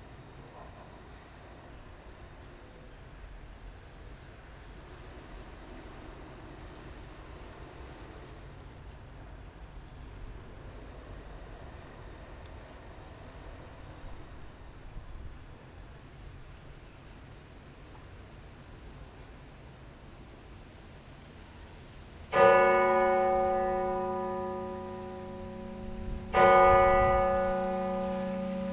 Troyes, France - Cathedral bells
The cathedral bells ringing ten, from the museum. These bells are heavy and old, but it's only an heap of terrible cauldrons. Linkage are completely dead, it's urgent to make works inside the bell tower. A sad bell ringing, this could be better.